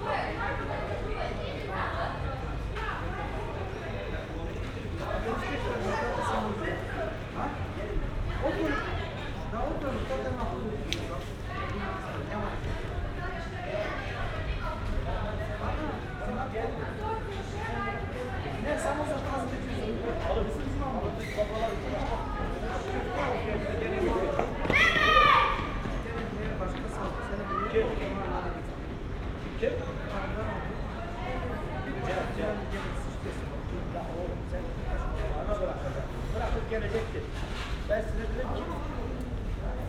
Weidengasse, Köln - Friday evening street ambience
street ambience Weidengasse Köln
(Sony PCM D50 + Primo EM172)